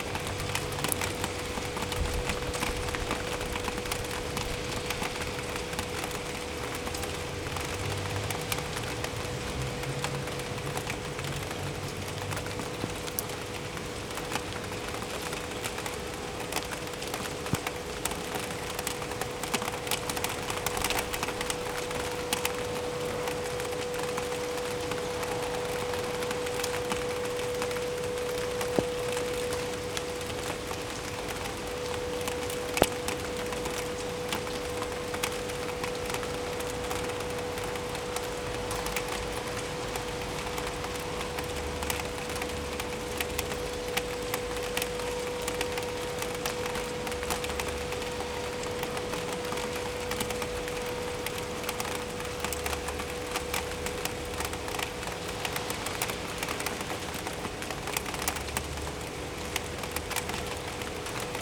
Maribor, Slovenia - rain from a 6th story window
rain from a 6th story window of hotel city, courtyard side